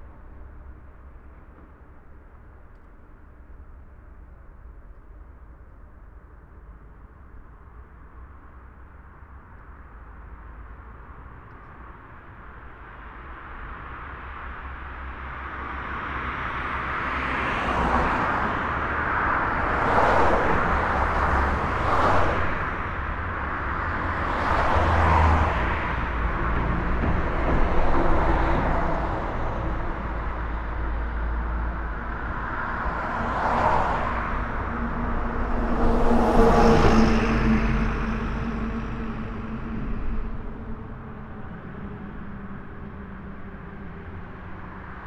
Rijeka, Croatia - Binaural Traffic
windshield EM172 + PCM-D50